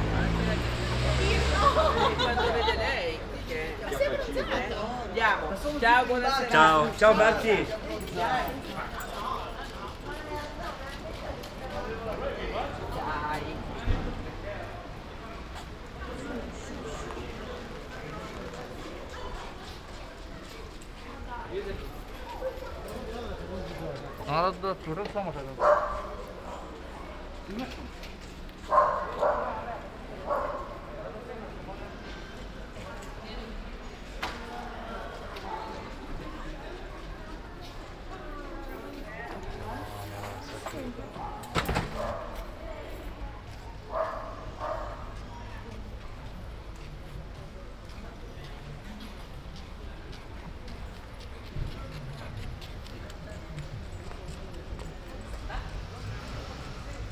Ascolto il tuo cuore, città. I listen to your heart, city. Several Chapters **SCROLL DOWN FOR ALL RECORDINGS - “La flânerie après trois mois aux temps du COVID19”: Soundwalk
“La flânerie après trois mois aux temps du COVID19”: Soundwalk
Chapter CIII of Ascolto il tuo cuore, città. I listen to your heart, city
Wednesday, June 10th 2020. Walking in the movida district of San Salvario, Turin ninety-two days after (but day thirty-eight of Phase II and day twenty-five of Phase IIB and day nineteen of Phase IIC) of emergency disposition due to the epidemic of COVID19.
Start at 7:31 p.m., end at h. 8:47 p.m. duration of recording 38'23'', full duration 01:15:52 *
As binaural recording is suggested headphones listening.
The entire path is associated with a synchronized GPS track recorded in the (kml, gpx, kmz) files downloadable here:
This soundwalk follows in similar steps to exactly three months earlier, Tuesday, March 10, the first soundtrack of this series of recordings. I did the same route with a de-synchronization between the published audio and the time of the geotrack because:
Piemonte, Italia, 10 June 2020